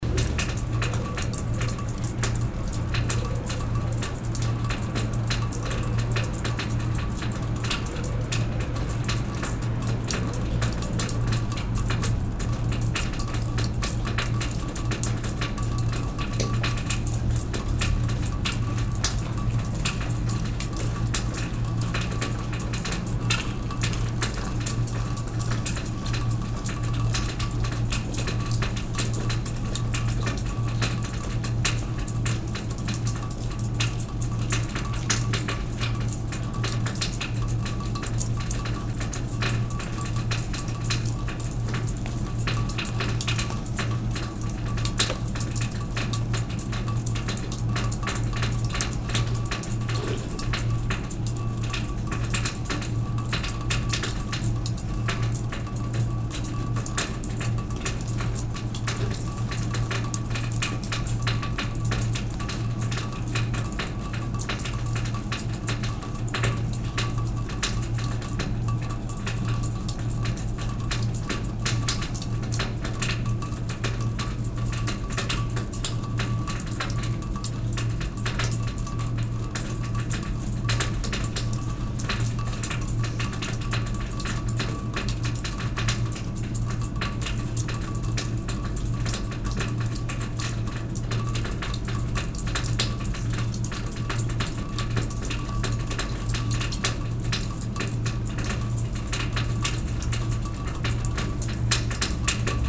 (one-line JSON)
{"title": "Moulins, Rue dEnghien, Snowmelt", "date": "2010-12-29 01:00:00", "description": "France, Auvergne, snowmelt, water", "latitude": "46.57", "longitude": "3.33", "altitude": "216", "timezone": "Europe/Paris"}